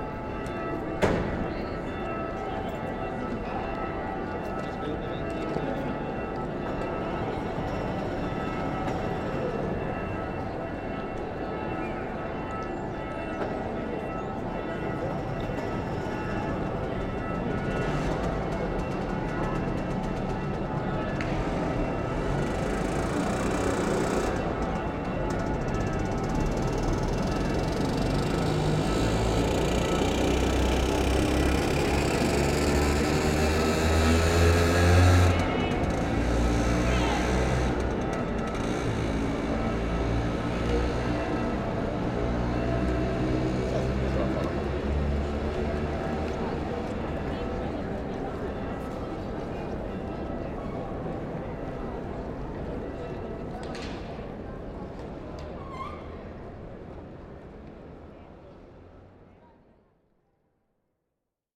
Police alarm, a motorbike, people passing by, bells ringing, and other common sounds of the square Piazza del Duomo.